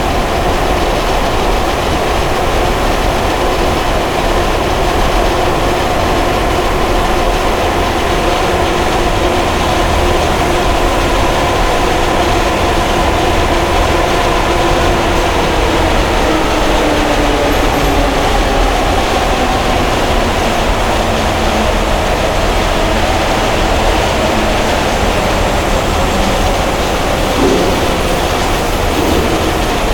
Ventilation system inside the Maastunnel. It was a very windy day, the sound of the wind moves through the ventilation system of the tunnel creating a rich noise. It is possible to hear a scooter too. Recording made with Usí pro mics.